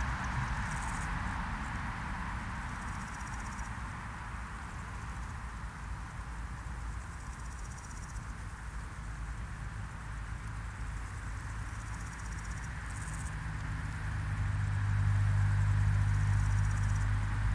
Recorded on the 16th of July, whilst taking a walk around the area of Hönow - I was testing the micbooster microphones, this is an unedited recording of the crickets. It was a warm day, a bit of wind but it didn't really effect the microphones. The sound at the end is of someone about to sneeze.